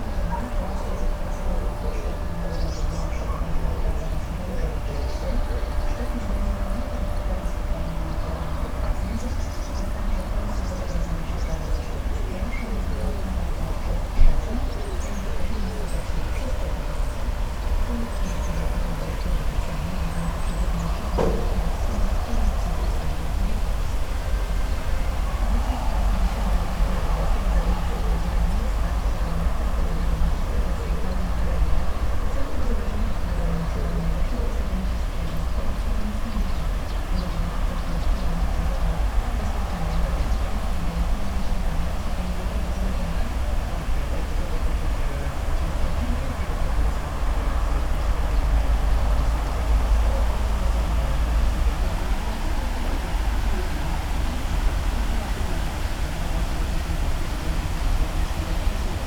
a man repairing a tractor in a barn and listing to a radio. muffled sounds of tools and parts being moved around. wind in trees nearby (roland r-04)